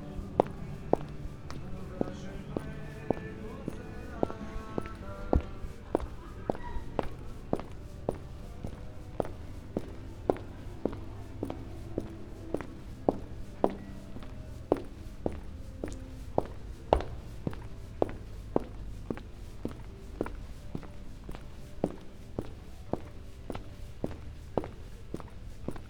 old town, Ljubljana - walking, at night